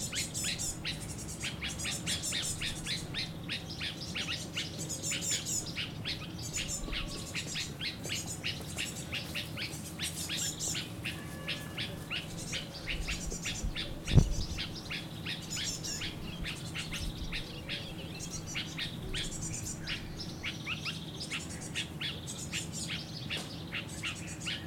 loading... - Jerusalem Botanical Gardens
Birds and frogs at the Jerusalem Botanical Gardens
מחוז ירושלים, ישראל, April 2018